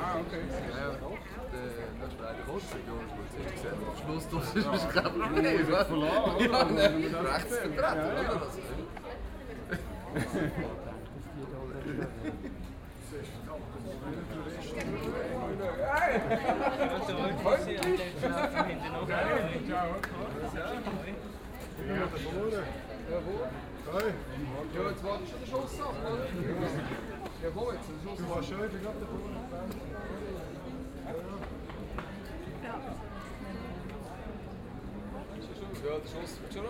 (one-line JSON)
{"title": "Aarau, Kirchplatz, Preperation for Party, Schweiz - Kirchplatz Festvorbereitung", "date": "2016-06-30 16:05:00", "description": "Kirchplatz, the day before the Maienmzug in Aarau. Different bells, quite long, preperations, laughter.", "latitude": "47.39", "longitude": "8.04", "altitude": "381", "timezone": "Europe/Zurich"}